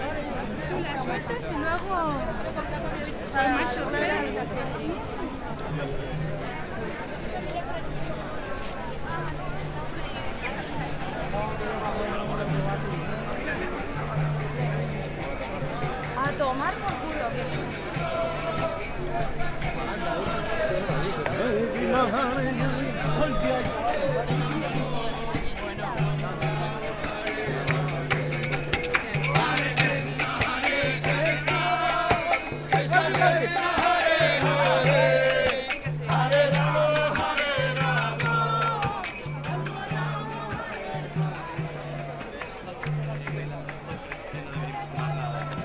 Soundwalk, Rastro, Madrid, 20100425
A brief soundwalk through Plaza Cabestreros, the epicentre of Madrids Rastro market, on a Sunday.
Madrid, España, European Union